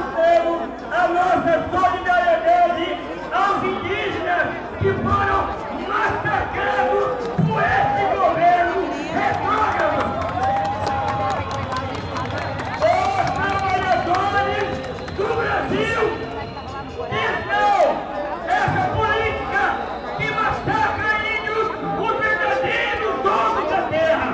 Calçadão de Londrina: Greve geral - Greve geral / General strike
Panorama sonoro: participantes de uma greve geral contra as reformas impostas pelo presidente Michel Temer discursavam com apoio de carros de som enquanto um grupo de manifestantes jogava capoeira em meio aos outros. Muitas pessoas participavam da manifestação com apitos e palavras de ordem. A passeata percorreu toda a extensão do Calçadão, atraindo atenção de pessoas que não participavam dela. O comércio aberto, fechou as portas durante a passagem dos grevistas.
Sound panorama: participants in a general strike against the reforms imposed by the Federal Government were speaking with the support of sound cars while a group of demonstrators played capoeira among the others. Many people participated in the demonstration with whistles and slogans. The march ran along the length of the Boardwalk, attracting attention from people who did not participate. The open trade, closed the doors during the passage of the strikers.
Londrina - PR, Brazil, 28 April